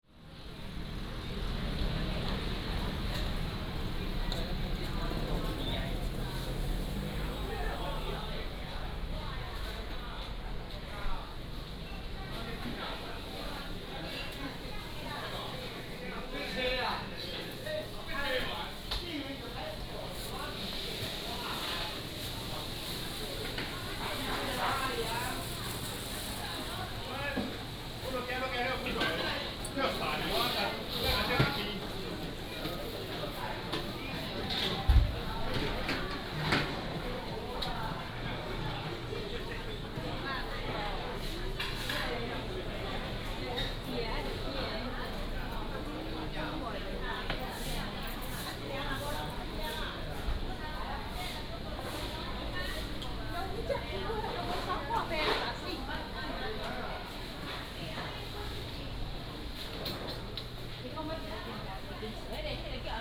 Market, Zhongle Rd., Minxiong Township - Walking in the market
Walking in the market, Traffic sound